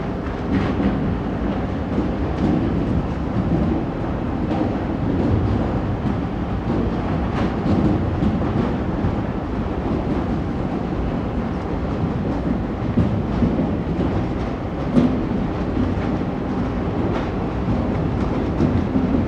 These coal trains run on especially built railways that link the Garzweiler brown coal mine to the power stations nearby. It's an impressively integrated system in a relatively small region that has been totally directed towards electricity production under the control of the energy giant RWE AG.
Germany, 1 November, ~15:00